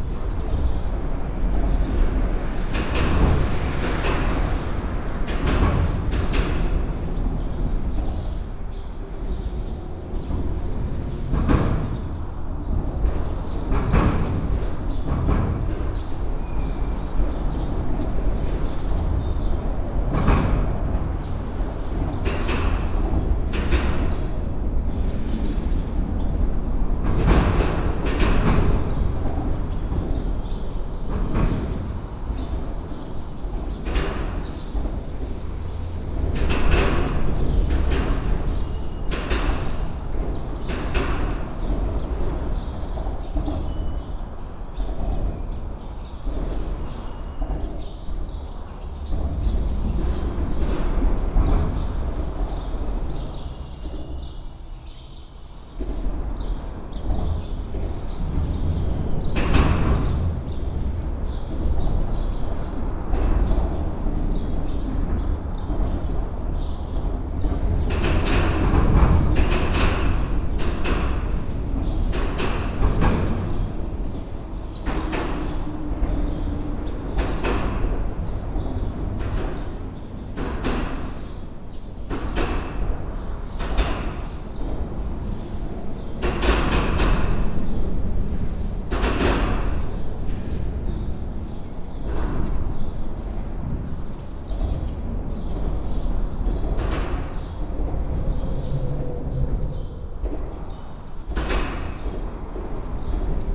縣道156, 鹿場里, Xiluo Township - Under the highway
Under the highway, Traffic sound, sound of birds